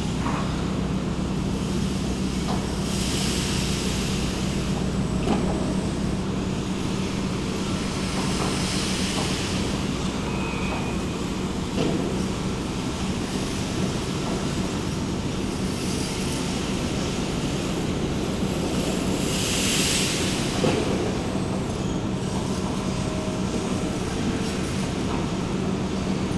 Industrial soundscape near the Thy-Marcinelle wire-drawing plant, a worker moving an enormous overhead crane, and charging rolls of steel into an empty boat.
Charleroi, Belgium